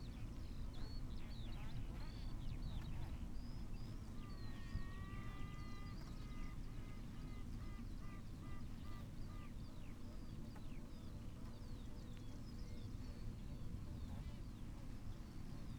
Green Ln, Malton, UK - bee swarm ...
bee swarm ... SASS to Zoom F6 ... bees swarming on the outside of one of the hives ...
Yorkshire and the Humber, England, United Kingdom, July 2020